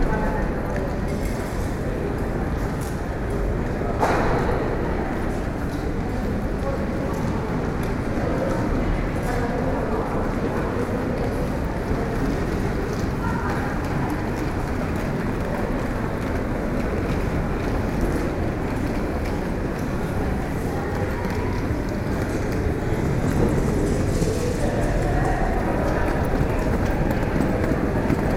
Verona Porta Nova. Railway station. Anonsment about trains delay